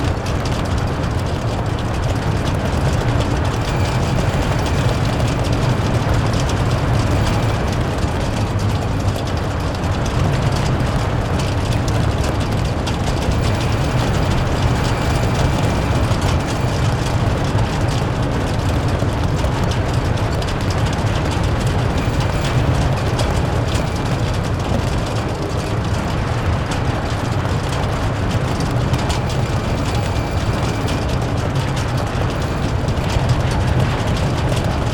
Strandweg, Den Haag, Nizozemsko - Wind blowing through the Hague Beach Stadium
Zuid-Holland, Nederland